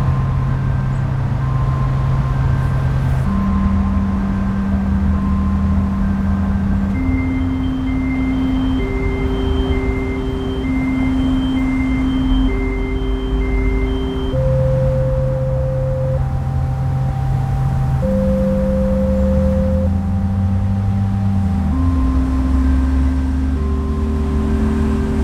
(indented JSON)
{
  "title": "Cologne, Skulpturenpark, Deutschland - What every gardener knows",
  "date": "2013-10-19 16:28:00",
  "description": "\"What every gardener knows\" is an outdoor audio installation of Susan Hiller in the Skulpurenpark Koeln. Strong traffic noise in the background",
  "latitude": "50.96",
  "longitude": "6.97",
  "altitude": "48",
  "timezone": "Europe/Berlin"
}